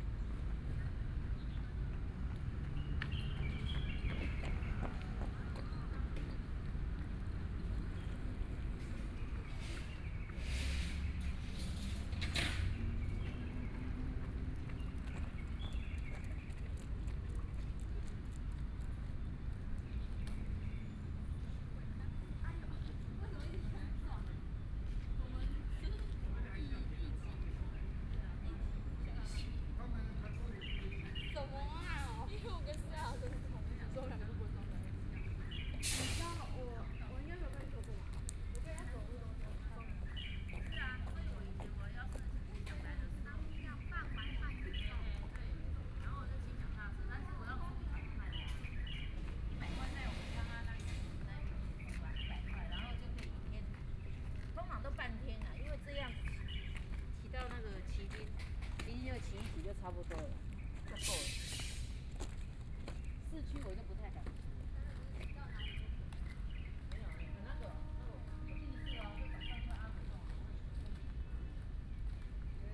Sitting on the roadside, Running and walking people, Tourist, Birdsong, Bicycle Sound
Sony PCM D50+ Soundman OKM II